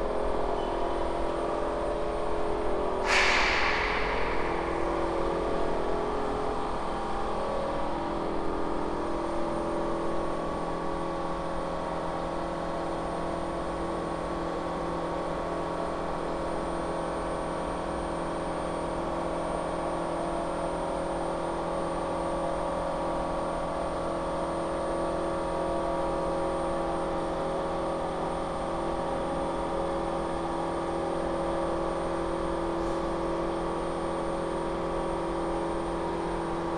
Museum of Contemporary Art, Zagreb, Croatia - acousmatic noise